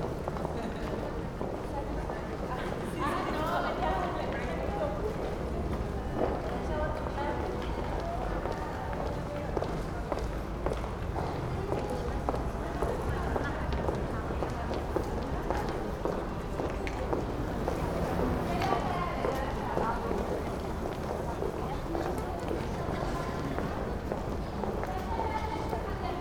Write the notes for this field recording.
Policemen on horseback pass by, Sony PCM-D50